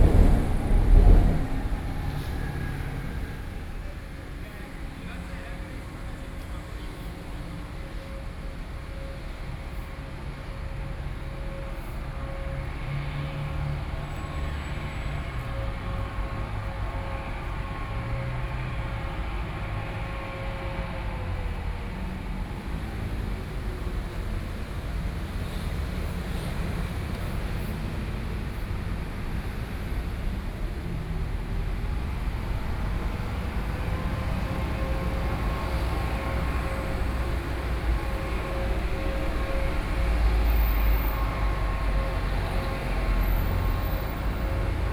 Beitou - Beside the road
Beside the road, Environmental Noise, Sony PCM D50 + Soundman OKM II